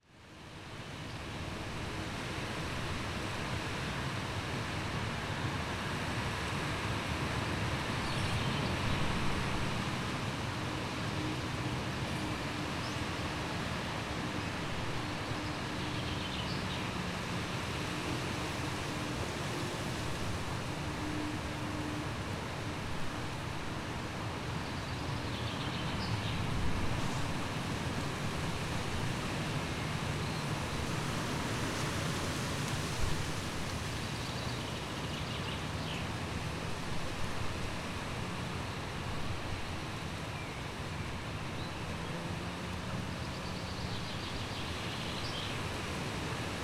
Crows and creaking trees during a windstorm while I napped, exhausted from a long bike ride, at a graveyard of a 14th century church.